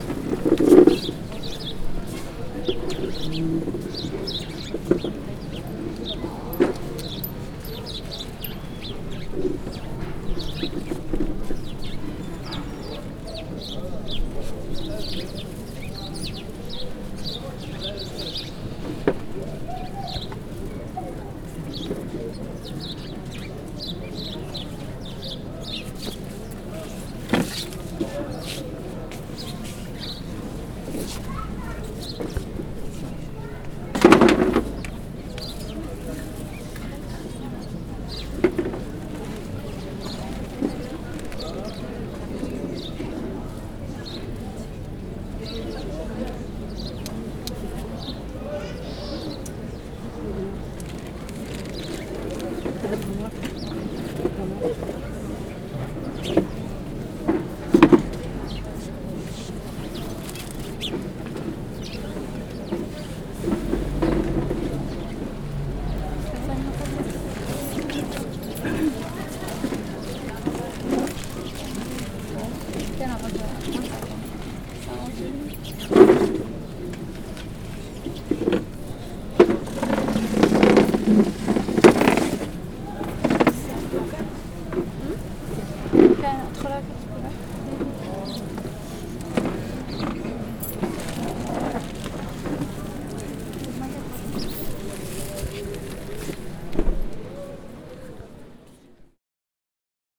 People Wailing in silence: a soft rumor, birds and the replacement of chairs: people entering and leaving the place. (Recorded with Zoom4HN)